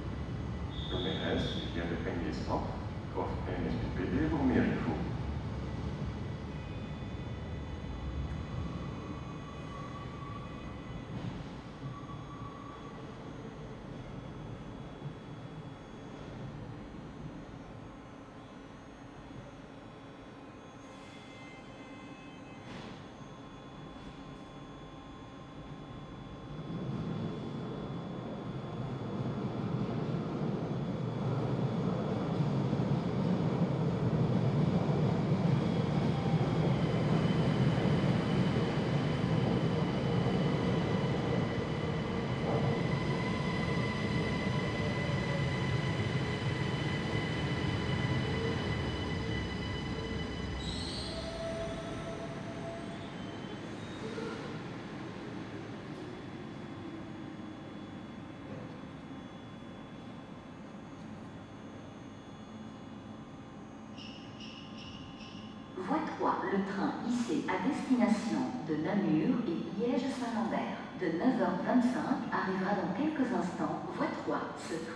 Gare de Bruxelles-Luxembourg, Rue de Trèves, Brussels, Belgique - Platform ambience
Voices, trains announcement, trains passing by.
Tech Note : Sony PCM-D100 internal microphones, wide position.
Région de Bruxelles-Capitale - Brussels Hoofdstedelijk Gewest, België / Belgique / Belgien, 28 December 2021, ~09:00